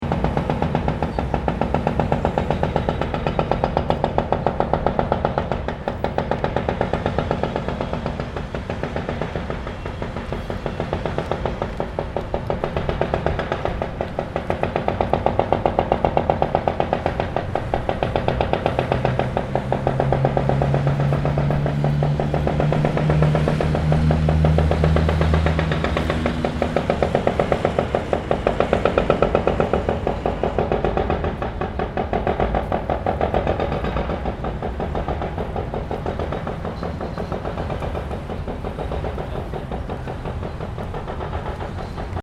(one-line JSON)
{"title": "Cnr Lorne St & Wellesley St", "date": "2010-09-28 14:00:00", "description": "Atmospheric sounds emitted during construction of Auckland Art Gallery", "latitude": "-36.85", "longitude": "174.77", "altitude": "1", "timezone": "Pacific/Auckland"}